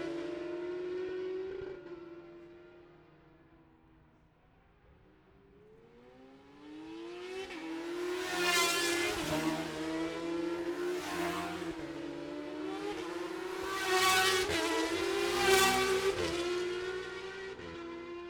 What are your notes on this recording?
bob smith spring cup ... classic superbikes qualifying ... dpa 4060s to MixPre3 ...